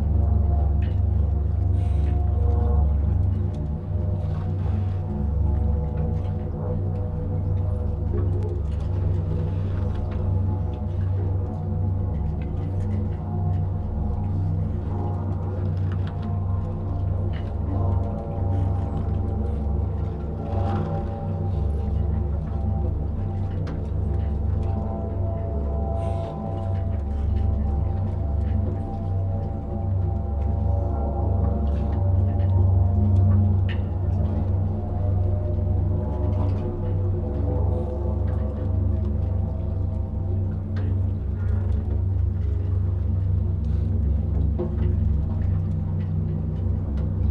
South End Ave, New York, NY, USA - Blue moon 2004
An on-site recording of the O+A installation Blue moon transforming the ambience around the small marina in real time with a resonance tube